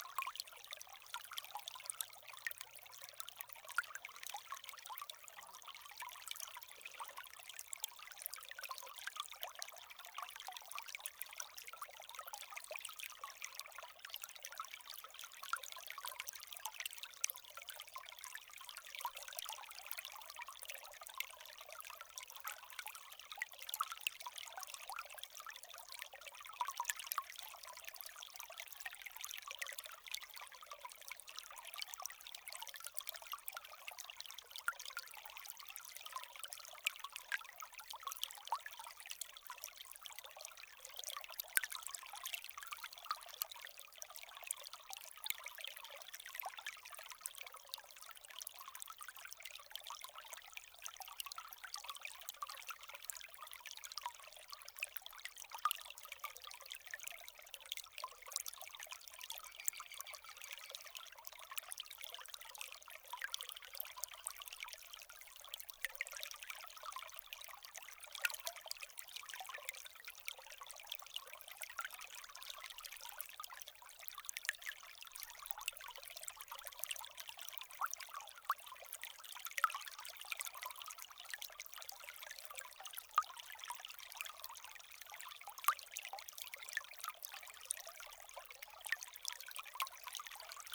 Saint-Genouph, France - One hour near the Loire river
The Loire river is a well known place, considering that there's a lot of touristical places : old castles, the beautiful weather and the overall beauty of its natural sites. This makes a good presage for a soundscape. However this recording was difficult to achieve. Indeed, on the Tours city outskirts, Loire river is extremely quiet, it's a lake without waves. In addition, important roads border the banks. Because of the cars, to record near an island is almost mandatory. Luckily, I was able to find the perfect place in Saint-Genouph village : beautiful, calm and representative of the river.
La Loire est un fleuve très connu du grand public, étant donné les symboles qu'il véhicule : la présence des châteaux, le beau temps, la beauté générale de ses sites naturels. Cela fait de jolis atouts en vue de la constitution d'un paysage sonore. Pourtant cet enregistrement s'est avéré difficile à réaliser. En effet aux abords de Tours, la Loire est extrêmement calme.
13 August 2017, 12:30